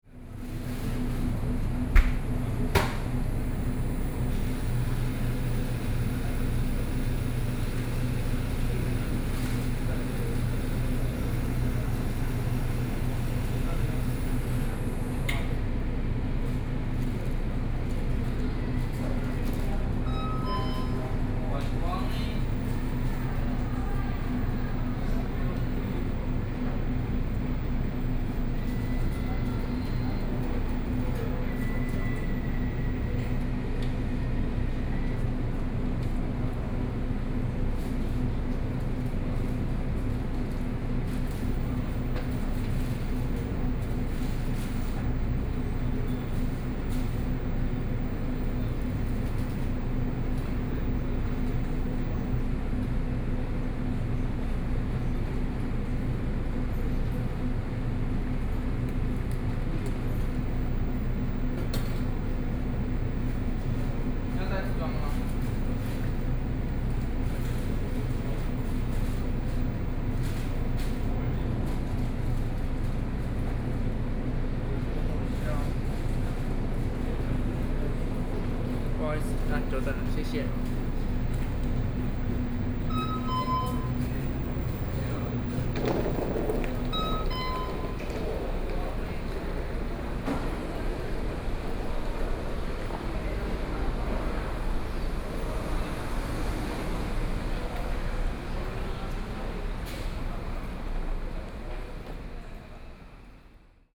Yilan Transfer Station, Yilan City - In convenience stores
In convenience stores
Sony PCM D50+ Soundman OKM II
Yilan County, Taiwan